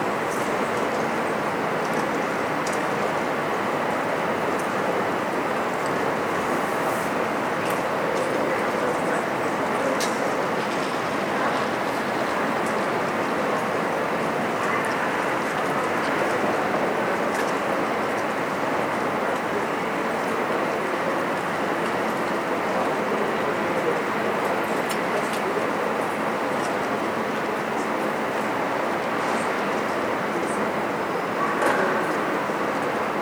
tondatei.de: hamburg, bremer reihe - straßenatmo